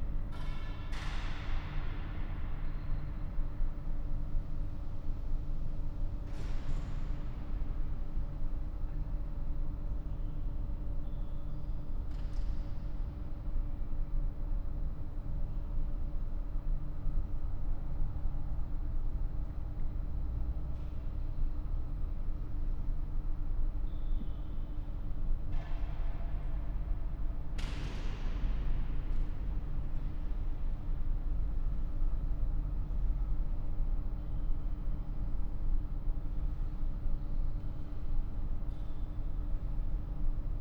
place revisited, no visitiors or service today, but an air conditioner or a similar device is humming. room tone and ambience, seems the wind outside moves things inside, not sure
(SD702, MKH8020 AB60)
12 September, 14:25